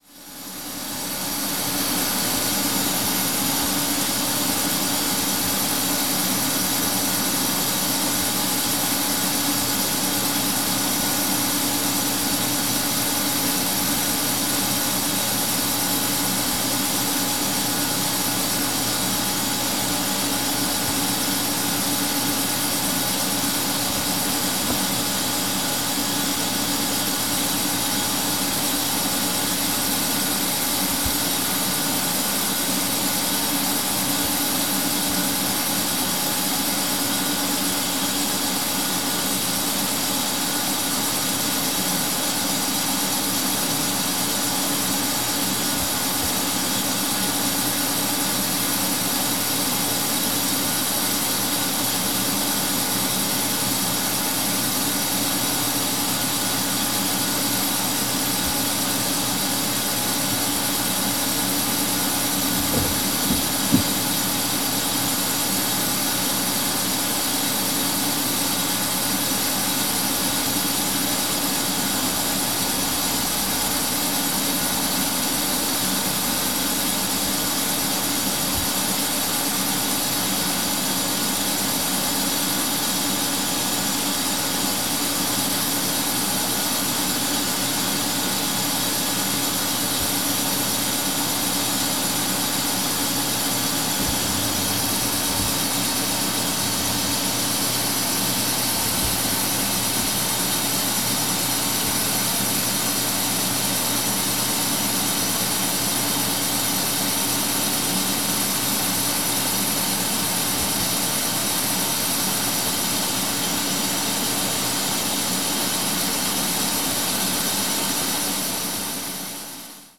a computer rack with a few computers, servers and routers operating in it. hypnotic ring of a grinding fan bearings, air flow fizz and flickering shower of data bleeps.
Poznan, Poland, 4 July 2014, 12:25